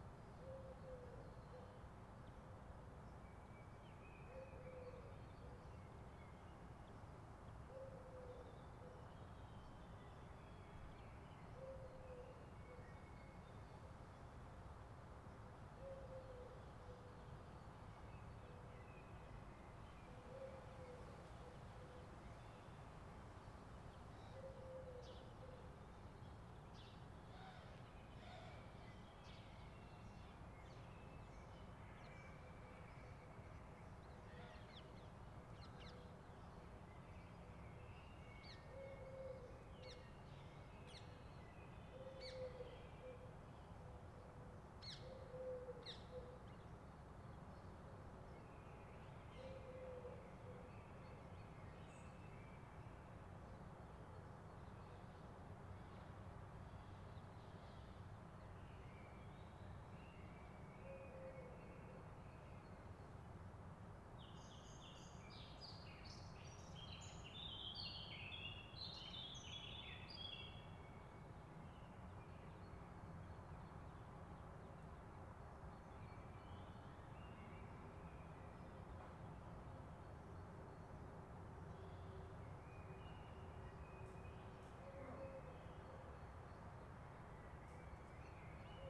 FenetreRue 24 Rue Edmond Nocard, Maisons-Alfort, France - Quiet Morning in Maisons-Alfort during covid-19
Quiet morning recording in Paris Suburb urban rumor birds
It's 6 o clock. We can here some Feral parrots, it's wild birds, witch are spreading into Paris Suburb for a few years
During Covid 19 containment
Recorder: Zoom H4Npro